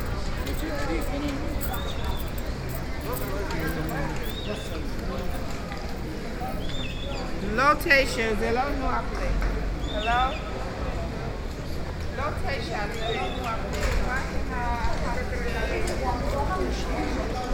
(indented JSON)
{
  "title": "Arad, Israel - Public square in Arad",
  "date": "2005-04-11 13:11:00",
  "latitude": "31.26",
  "longitude": "35.21",
  "altitude": "606",
  "timezone": "Asia/Jerusalem"
}